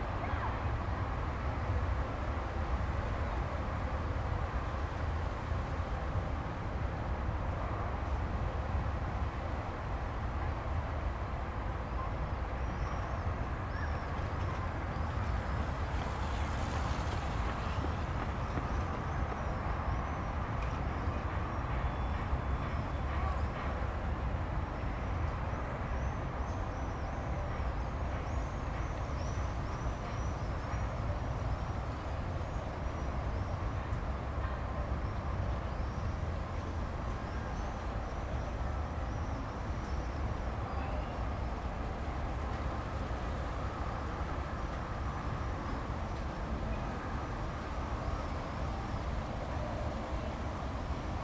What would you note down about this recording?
Summer afternoon at Wisła river bank. You can hear the city sounds in the distance.